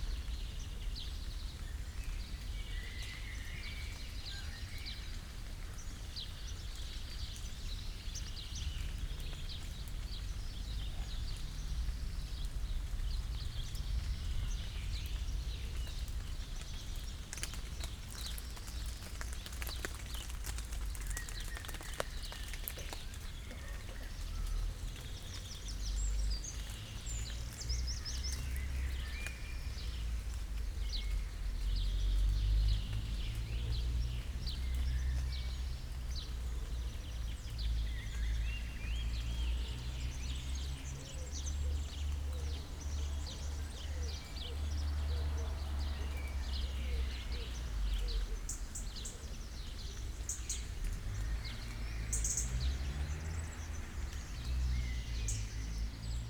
sunday morning village ambience, it has rained
(Sony PCM D50, DPA4060)
Beselich, Germany